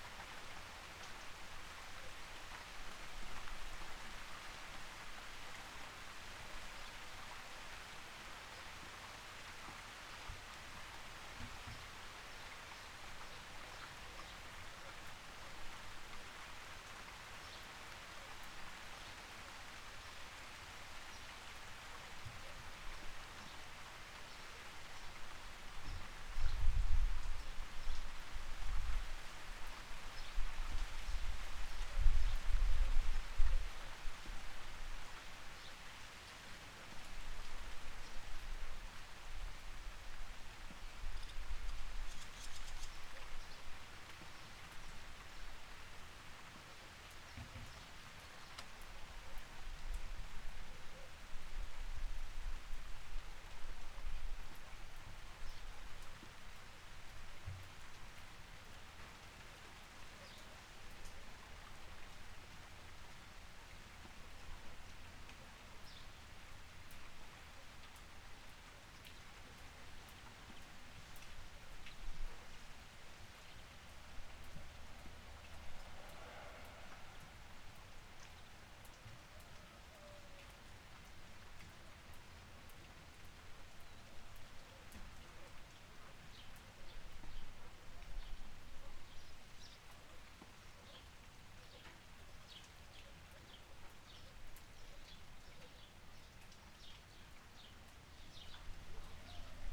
Zmeyovo, Bulgaria - Rain in the village of Zmeyovo
A short rain shower in the quiet village of Zmeyovo. Recorded with a Zoom H6 with the X/Z microphone.
Бългaрия, 25 May 2021